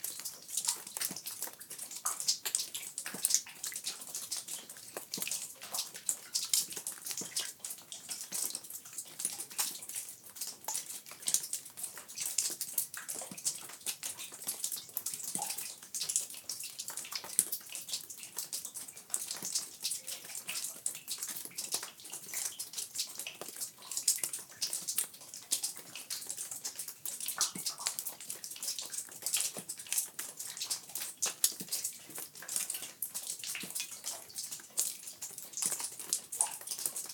Double Hole Crater Lava Flow - Ice cave near Double Hole Crater
This recording was collected inside an ice filled lava tube(ice cave) in the Double Hole Crater lava flow. During the winter months cold air collects inside the lava tube and, because it has no way to escape, it remains throughout the year. As water seeps in from above it freezes inside the cold air filled lava tube. This was recorded in the spring and snowmelt and rainwater were percolating through the lava and dripping from the lava tube ceiling onto the solid ice floor. This was recorded with a Wildtronics SAAM microphone onto a Zoom F6 recorder.